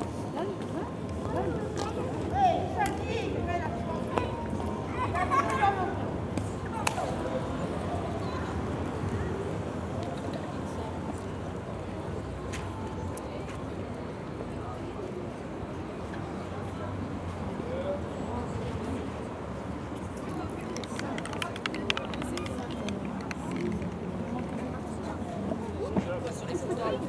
Charleroi, Belgique - Christmas market
Near the Chrysalis store, an automatic Santa-Claus broadcasts a small music every time somebody enters. It's like an horror film, with killer dolls. Frightening ! After I have a small walk in the Christmas market, during a very cold afternoon.
Charleroi, Belgium